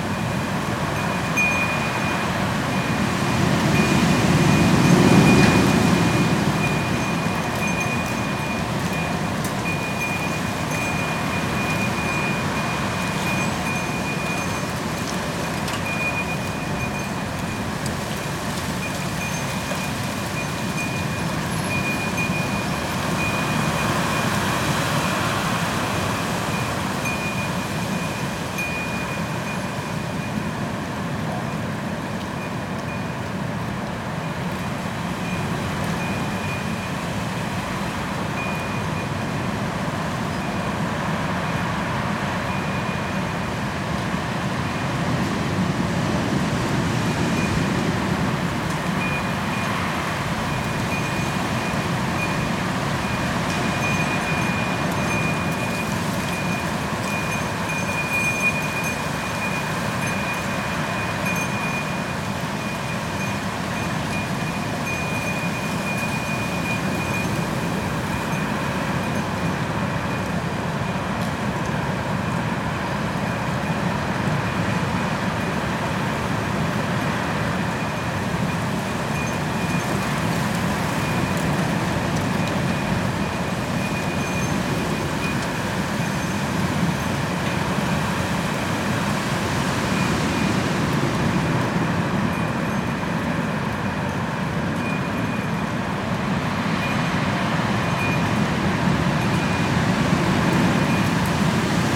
Nitra, Slovakia, (6.12.2020, 22:30)
Recorded with AT4022s and MixPre6